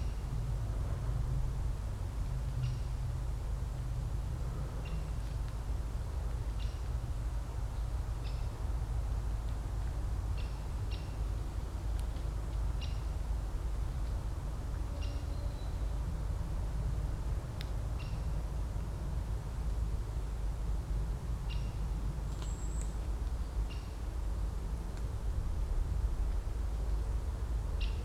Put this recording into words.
Sommer Morgen in einem Laubwald. Vogelstimmen, ein Flugzeug überfliegt die Region und im Gestrüpp bewegt sich vorsichtig und kurz aufgeschreckt ein Reh. On a summer morning in a broadleaf forest. Bird whistles, a plane crossing the region and in the bushes the careful movements of a deer.